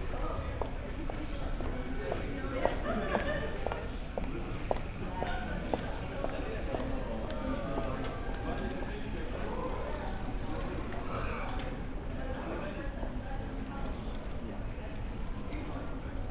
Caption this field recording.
evening ambience heard near Cologne School for Dance and Music, (Sony PCM D50, Primo Em172)